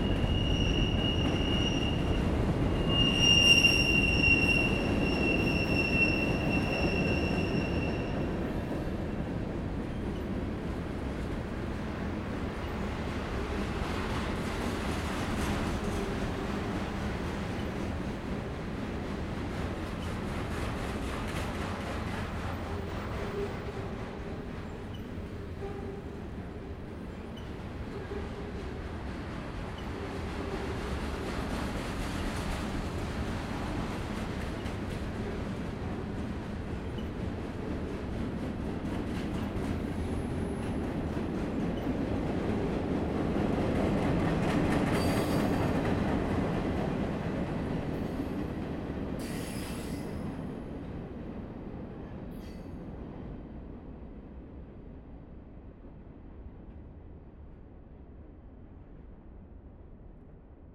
CSX Freight train passing recorded with H4n Zoom